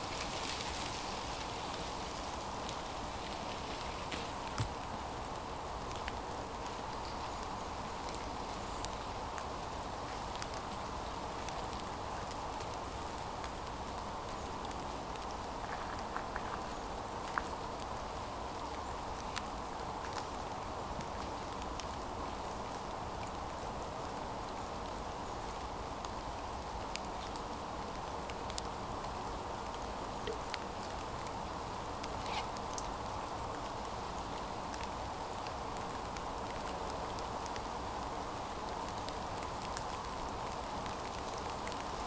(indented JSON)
{
  "title": "Highland Water, Minstead, UK - Rain, stream, passing cyclists, child in the distance",
  "date": "2017-01-01 14:46:00",
  "description": "Tascam DR-40 in the rain",
  "latitude": "50.88",
  "longitude": "-1.65",
  "altitude": "63",
  "timezone": "Europe/Berlin"
}